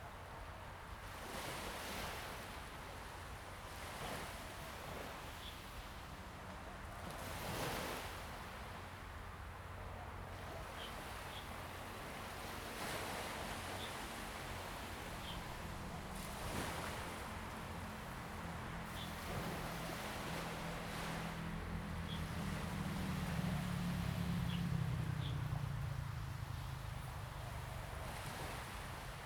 慈堤, Jinning Township - Waves and birds sound
Birds singing, Forest and Wind, Waves and tides
Zoom H2n MS+XY
金門縣 (Kinmen), 福建省, Mainland - Taiwan Border, 3 November 2014, 07:18